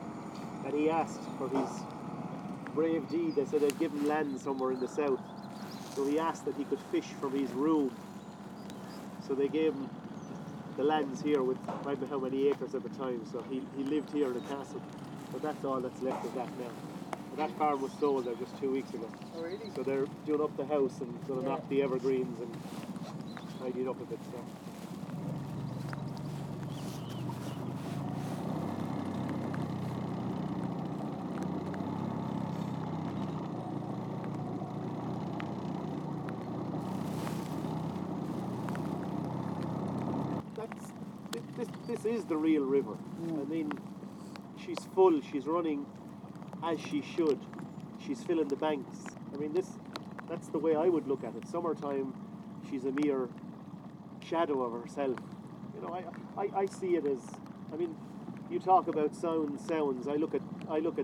Ballynaraha, Co. Tipperary, Ireland - Ralph Boat Trip
Sounding Lines
by artists Claire Halpin and Maree Hensey
31 March 2014, ~13:00